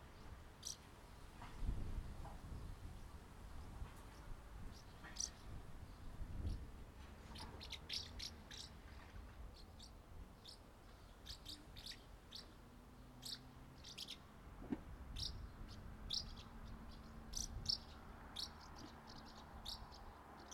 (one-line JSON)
{"title": "Common house martin - Delichon urbicum", "date": "2021-07-17 12:56:00", "description": "Common house martin (Delichon urbicum) singing. Village life on a Saturday afternoon. Recorded with Zoom H2n (XY, on a tripod, windscreen) from just below the nest.", "latitude": "46.18", "longitude": "16.33", "altitude": "203", "timezone": "Europe/Zagreb"}